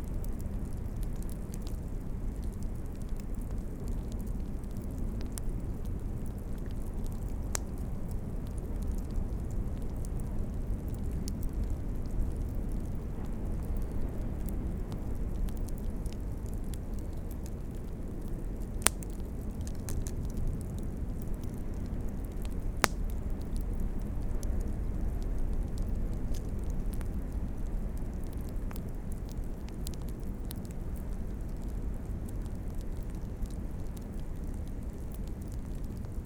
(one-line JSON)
{"title": "Royal National Park, NSW, Australia - campfire by marley lagoon", "date": "2018-05-26 00:15:00", "description": "a small fire crackling underneath the tea tree's.", "latitude": "-34.11", "longitude": "151.14", "altitude": "13", "timezone": "Australia/Sydney"}